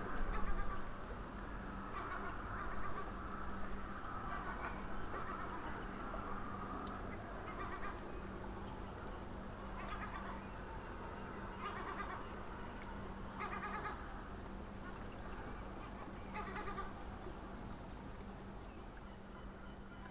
at dawn, nocturnal and day sonic scape merges, celebrating life with full voices ...